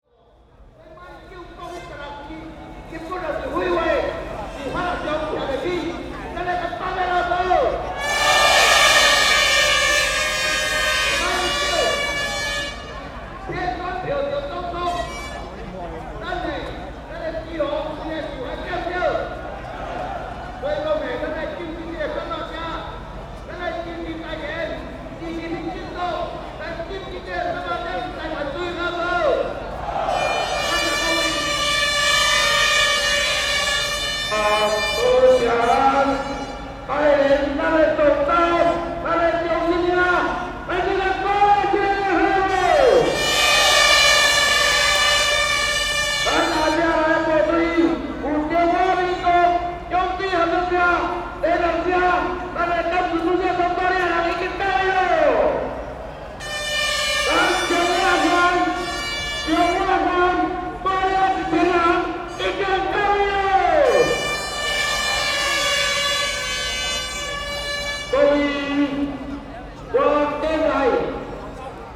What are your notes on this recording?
Protest against U.S. beef, Rode NT4+Zoom H4n